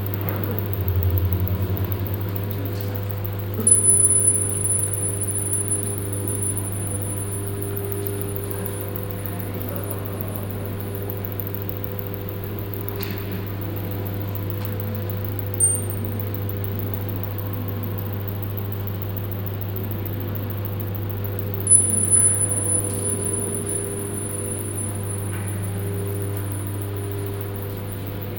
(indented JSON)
{
  "title": "Berlin, Hamburger Bhf, exhibition - berlin, hamburger bhf, exhibition",
  "date": "2012-02-07 15:31:00",
  "description": "Inside the right wing of the exhibition building on the first floor. The sound of the Ikeda exhibition db and visitors walking around - here the black room.\nsoundmap d - social ambiences, art places and topographic field recordings",
  "latitude": "52.53",
  "longitude": "13.37",
  "altitude": "38",
  "timezone": "Europe/Berlin"
}